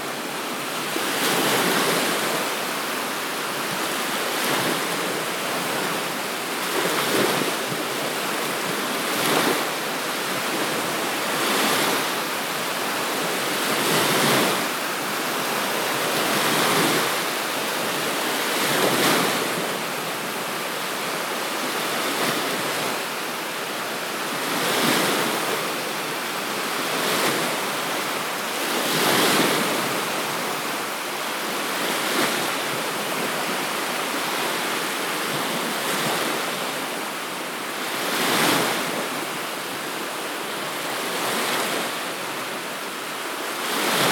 Neringos sav., Lithuania - The Beach at Night
Recordist: Saso Puckovski
Description: On the beach on a calm morning. Waves crashing. Recorded with ZOOM H2N Handy Recorder.